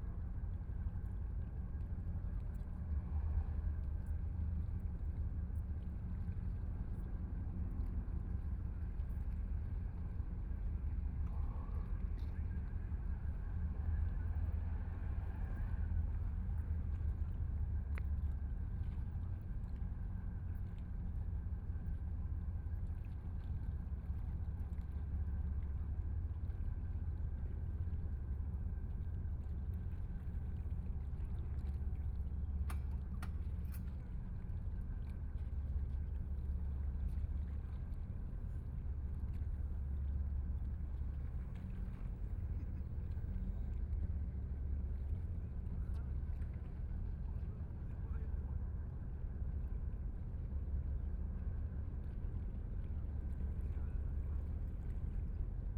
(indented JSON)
{
  "title": "Huangpu River, Shanghai - Ship",
  "date": "2013-11-29 13:45:00",
  "description": "Standing beside the river, And from the sound of the river boat, Binaural recording, Zoom H6+ Soundman OKM II",
  "latitude": "31.20",
  "longitude": "121.49",
  "altitude": "8",
  "timezone": "Asia/Shanghai"
}